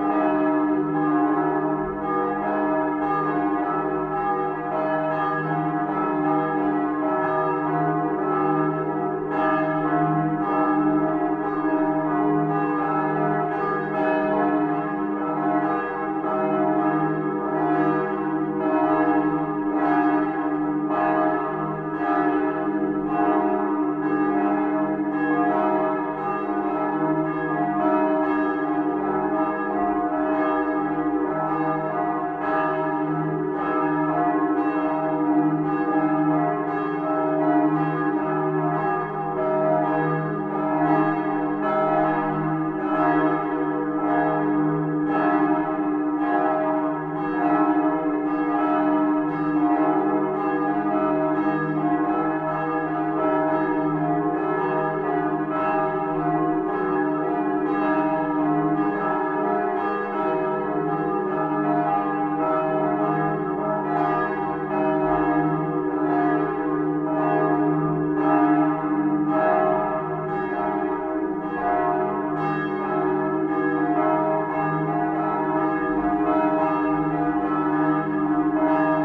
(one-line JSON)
{"title": "Burgstraße, Lingen (Ems), Deutschland - Sunday Morning Bells, St. Bonifatius Church", "date": "2017-09-10 08:00:00", "description": "8 am, Sunday morning, recorded from across the church\nSound Devices Recorder and beyerdynamics MCE82 mic\nFirst aporee recording from this rural region called \"Emsland\" :-)", "latitude": "52.52", "longitude": "7.32", "altitude": "25", "timezone": "Europe/Berlin"}